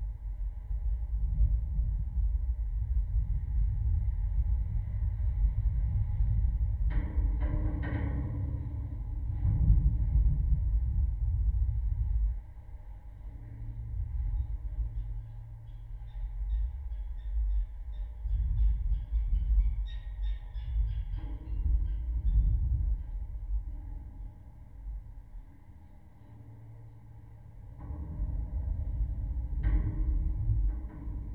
Klaipėda, Lithuania, pier's metallic
contact microphones upon a metallic construction on a pier